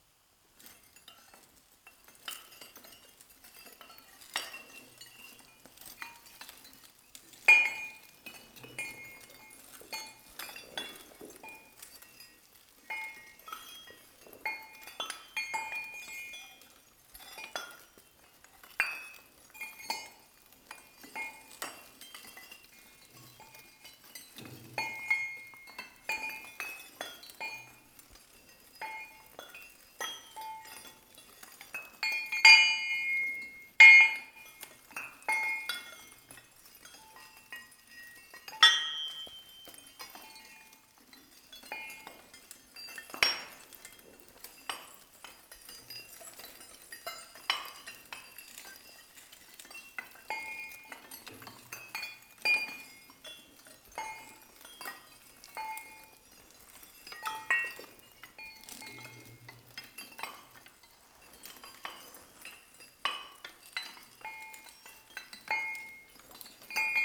Differdange, Luxembourg - Industrial sheep
In an abandoned iron underground mine, chains and hooks are pending. I'm playing softly with it. This makes the noise of a flock of sheep. Metaphor is industrial sheep.